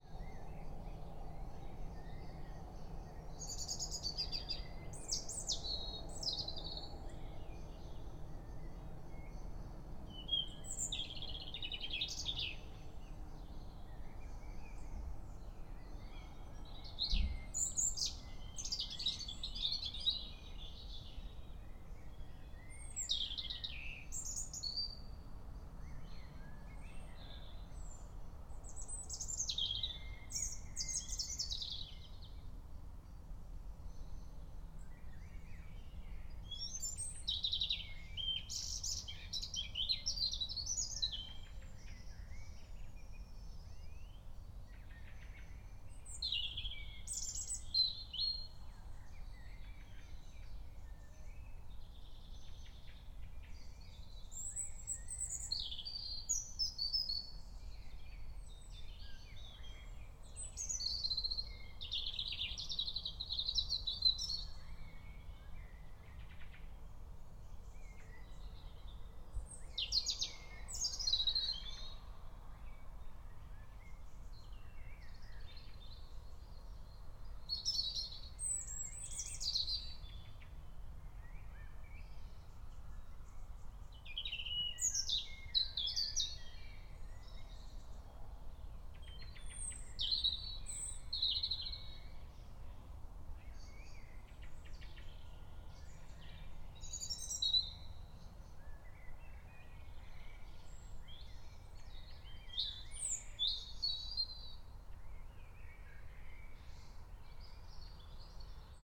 Rue des Agneaux, Orgerus, France - Robin singing - end of the day - third weeks of spring
I was on a quiet street, I was locking for a zone where I can heard differents bird's sound at the end of this sunny day.